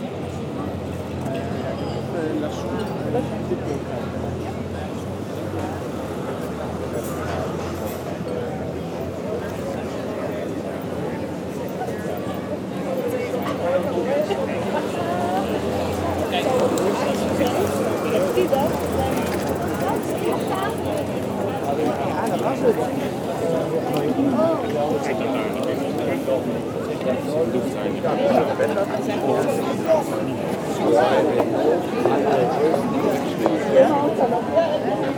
On the main square of Maastricht, people drinking coffee, tea and beer on the bar terraces.
Maastricht, Netherlands